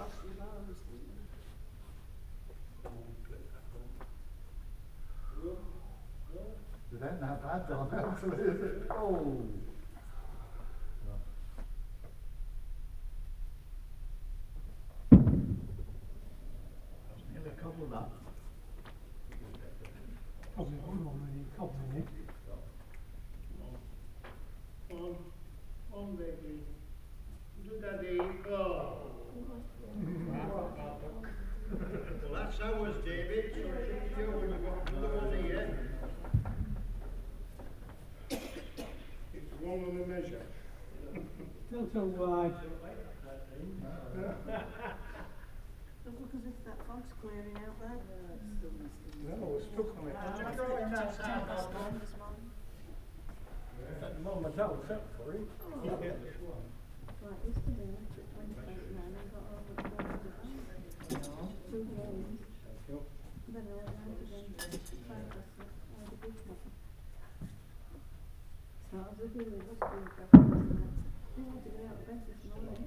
Long mat bowls ... voices ... heating ... Olympus LS 14 integral mics ...